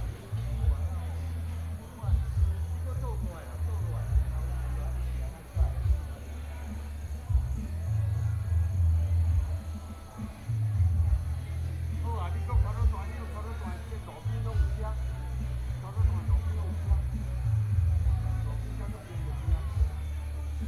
郡界, Donghe Township - Wedding Banquet
At the roadside, Traffic Sound, Wedding Banquet